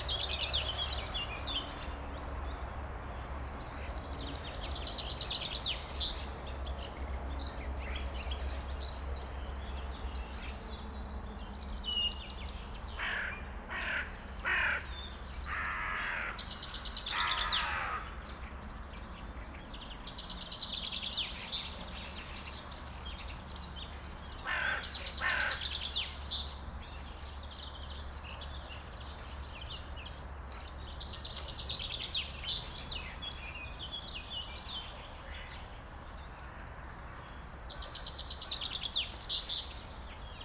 Morning chorus #2 Gmina Skoki, Poland - Morning chorus #2 Skoki, Poland
morning chorus with distant highway sounds
28 March 2012, 06:12